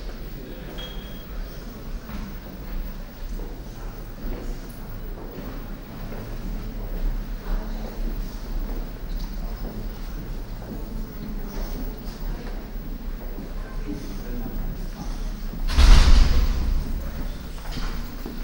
schritte und gespräche zur vernissage von studentenarbeiten auf der altitude 08 der Kunsthochschule für Medien (KHM)
soundmap nrw: social ambiences/ listen to the people - in & outdoor nearfield recordings
17 July 2008, 14:00